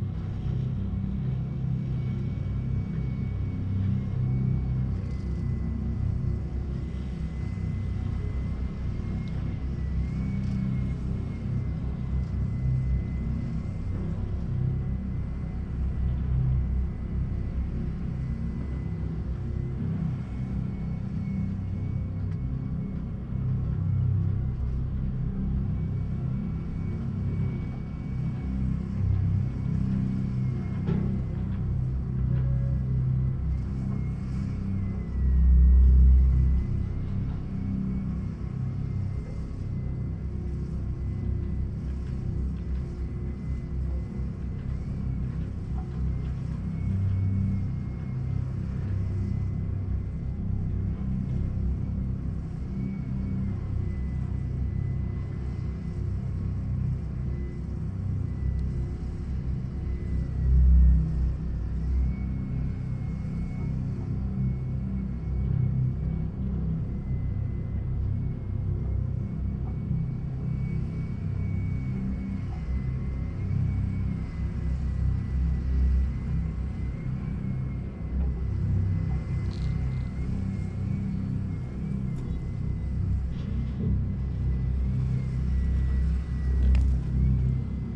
Rubbing Glances - Visit #4
Construction site of the old Military base Molitor
Nancy, France